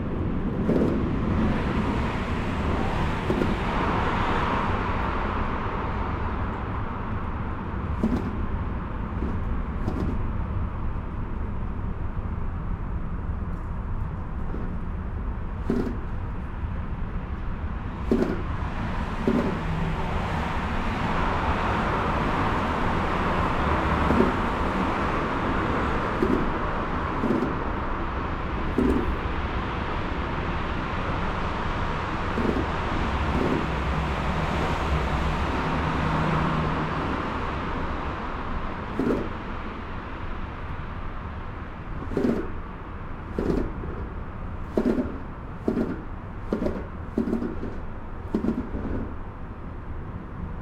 Machelen, Belgium - Vilvoorde viaduct
Below the Vilvoorde viaduct. Sound of the traffic. It's not the most beautiful place of brussels, its quite aggressive and hideous.